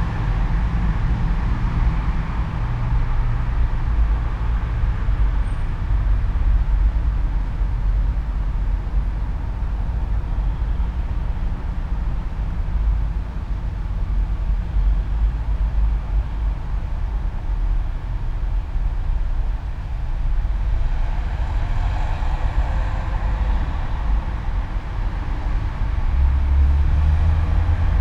all the mornings of the ... - aug 29 2013 thursday 07:30
Maribor, Slovenia